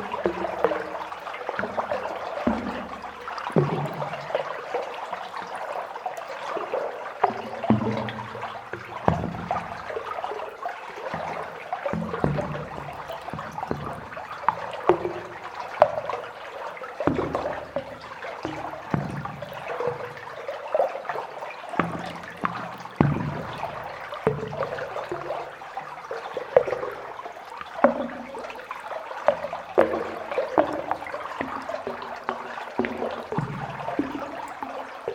Volmerange-les-Mines, France - Strange pipe swallows
In an underground mine, a strange pipe sound. Water is entering in it and makes this bubbles sounds. In the second part of this sound, I play with water (there's a little more water, making a dam). This sound is short because oxygen level was extremely low. It's not very good for my health ;-)
All this water music is natural.